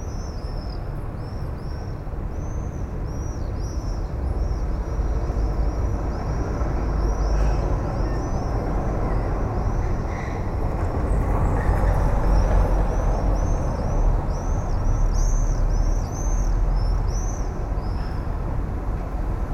Porto, Rua da Conceiçào
from the hotels window
Oporto, Portugal, 28 July 2010